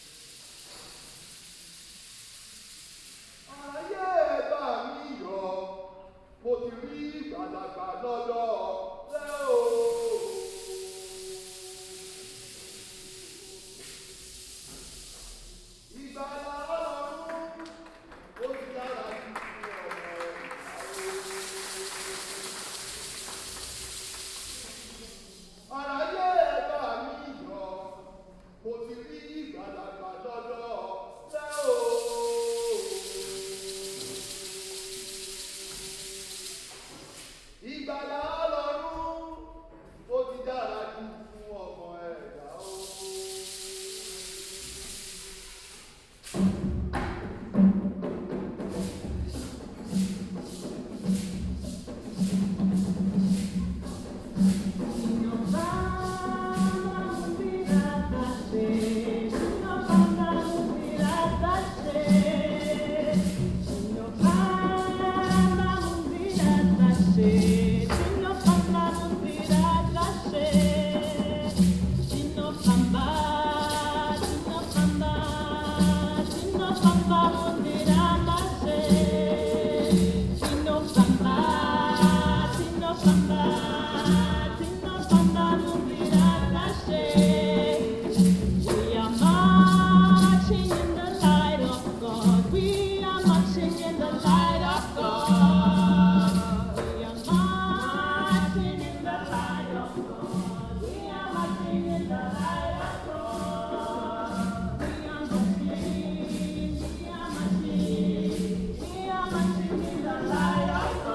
Christuskirche, Hamm, Germany - Blessing the Afrika Festival...
… we are at the community hall of a Lutheran church, the “Christuskirche”, in Hamm West… a large very colourful audience is gathered here… many in African attire… inside the hall, the opening of the Afrika Festival is reaching its peak… Yemi Ojo on the drum performs a traditional Yoruba blessing for this day… two women pick up and join in with “native” and Christian African songs, Yvonne Chipo Makopa and Godsglory Jibrill-ellems… it’s the Yes Afrika Festival 2014…